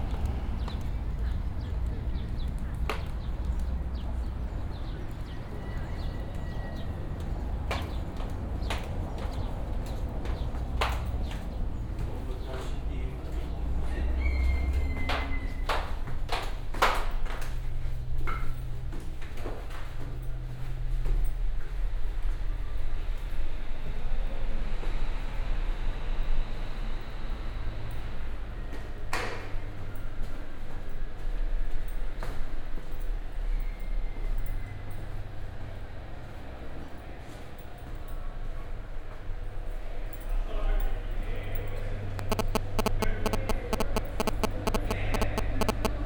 lisbon, campo dos martires - garage soundwalk
kind of vertical soundwalk over 3 stories from ground level in the park to the underground garage levels. i forgot to switch of my phone, so at about 45sec the mobile disturbs the recording. i have left it in because it indicates also the change of network cells, while changing the city layers.